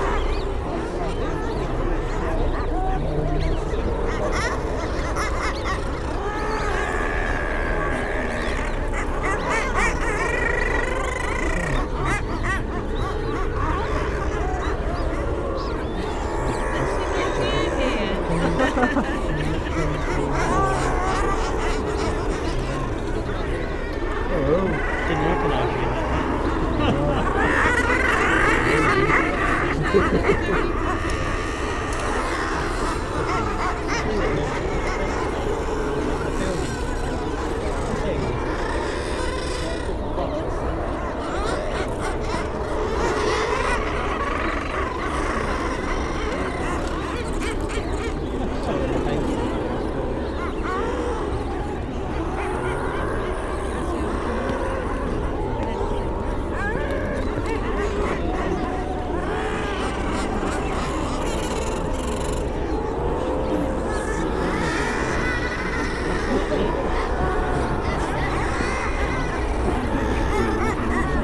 North Sunderland, UK - guillemot colony ...
Staple Island ... Farne Islands ... wall to wall nesting guillemots ... background noise from people ... boats ... planes ... cameras ... bird calls from kittiwakes ... oystercatchers ... razor bills ... initially a herring gull slips between the birds causing consternation ... warm sunny day ... parabolic reflector ...
Seahouses, UK